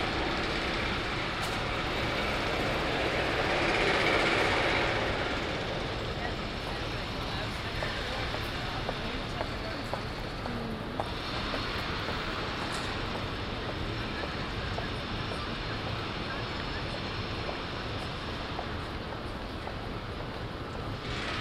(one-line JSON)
{"title": "Turfmarkt, Den Haag, Nederland - Construction work", "date": "2015-03-04 16:30:00", "description": "Pedestrians and other traffic around a big building excavation at the Turfmarkt, Den Haag.\nBinaural recording. Zoom H2 with SP-TFB-2 binaural microphones.", "latitude": "52.08", "longitude": "4.32", "altitude": "13", "timezone": "Europe/Amsterdam"}